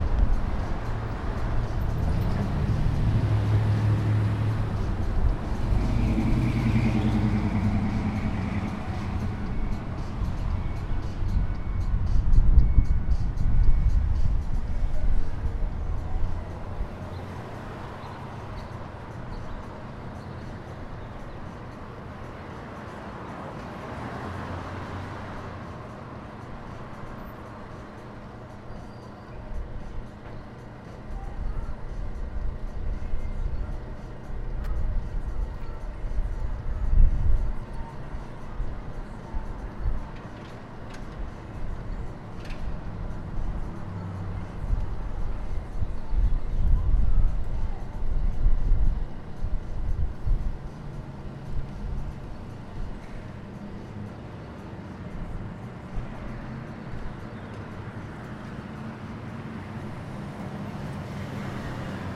standing on the street corner of Colorado Ave, next to a bar with music playing, cars and people casually roll by